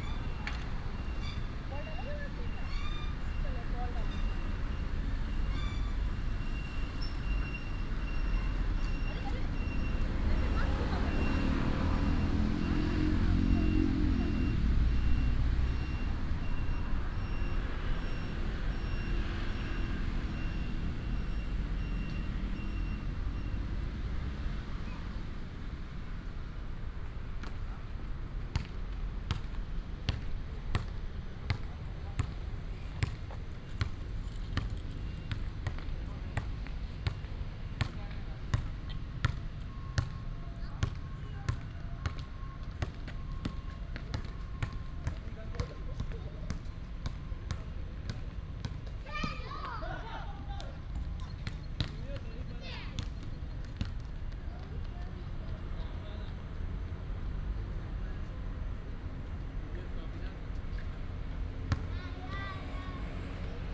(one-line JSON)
{"title": "建功公園, East Dist., Hsinchu City - in the Park", "date": "2017-10-06 18:33:00", "description": "in the park, Childrens play area, traffic sound, Father and children playing basketball, Binaural recordings, Sony PCM D100+ Soundman OKM II", "latitude": "24.79", "longitude": "121.00", "altitude": "57", "timezone": "Asia/Taipei"}